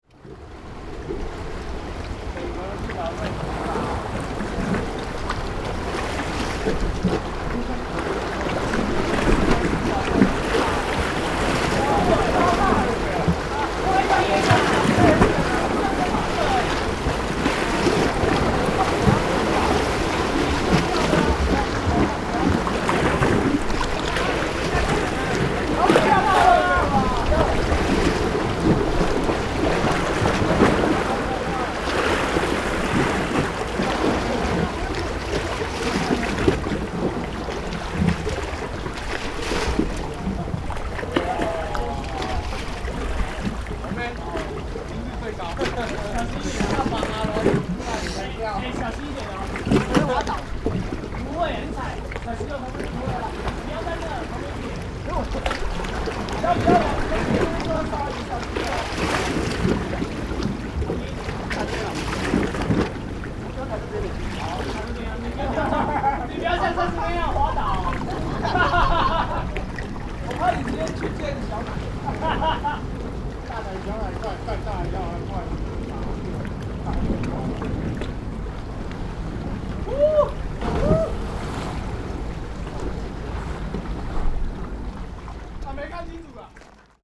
Keelung, Taiwan - waves
Young students are ready to play Diving, Rode NT4+Zoom H4n